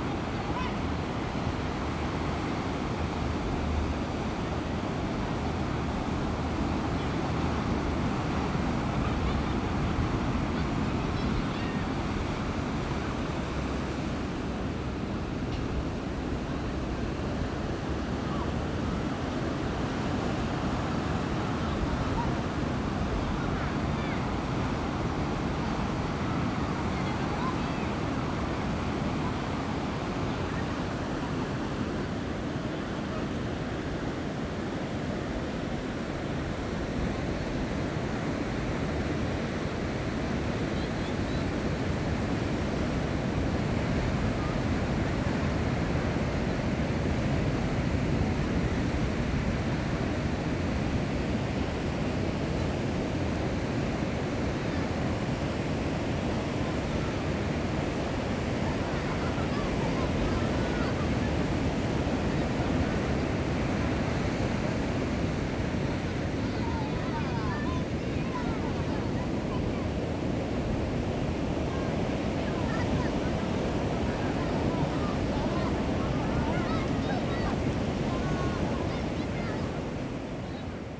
頭城鎮外澳里, Yilan County - On the coast
On the coast, Sound of the waves, Very hot weather
Zoom H6+ Rode NT4